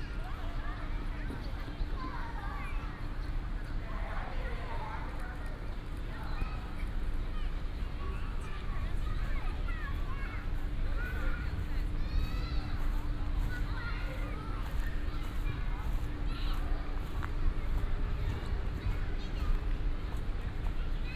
holiday, Many families are on the grass